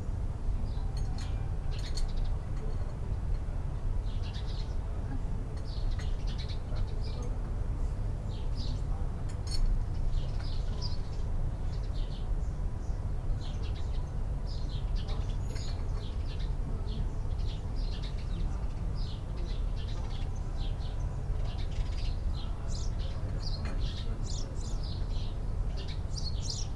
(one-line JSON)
{"title": "Sparrows in the garden of La Vina, Lymington, Hampshire, UK - Sparrows in the garden of the restaurant", "date": "2015-05-23 10:55:00", "description": "We were in need of breakfast and the High Street was heaving with people and a very busy market. We stepped into the first place we saw advertising a breakfast and discovered to our delight that it had a huge back garden full of tables, sunlight and sparrows. A large building to the right had holes beneath the tiles in which the sparrows were either nesting or finding tasty treats to eat! Little speakers disseminated Spanish music into the garden and this mixed nicely with the little clinks and clanks of cups of coffee and spoons and wee jugs of cream. You can also hear the low background drone of traffic on the encircling roads. But up front and centre are the sparrows who flew back and forth while we had our breakfast and filled the air with their wondrous and busy little sounds.", "latitude": "50.76", "longitude": "-1.54", "altitude": "18", "timezone": "Europe/London"}